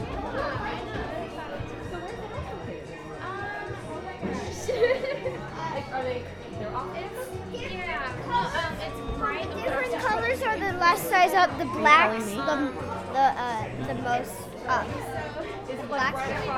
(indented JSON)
{
  "title": "neoscenes: Into The Wind shop",
  "date": "2011-09-09 19:20:00",
  "latitude": "40.02",
  "longitude": "-105.28",
  "altitude": "1630",
  "timezone": "America/Denver"
}